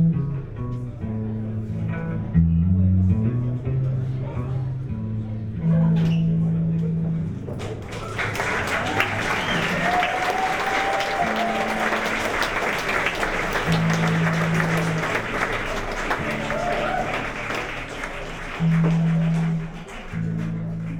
berlin, skalitzer straße: monarch club - the city, the country & me: monarch club
michael hurley in concert
the city, the country & me: may 15, 2013
Berlin, Germany, 15 May 2013, ~22:00